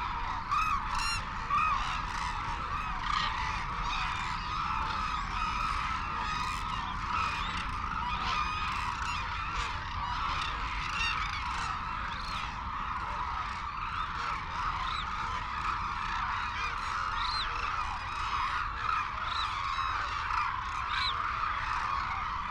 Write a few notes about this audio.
Arasaki Crane Centre ... Izumi ... calls and flight calls from white naped cranes and hooded cranes ... cold windy sunny ... Telinga ProDAT 5 to Sony minidisk ... background noise ... wheezing whistles from young birds ...